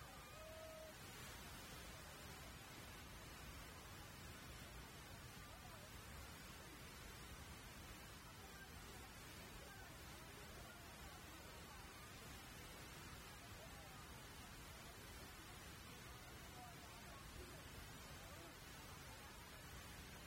Pretorialaan, Rotterdam, Netherlands - Markt Afrikaanderplein
Wednesday´s market. Recorded with binaural Soundman mics